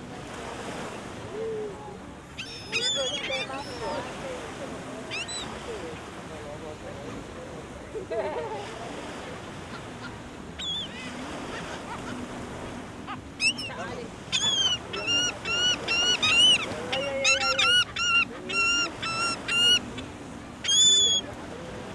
Progresso - Mexique
Ambiance plage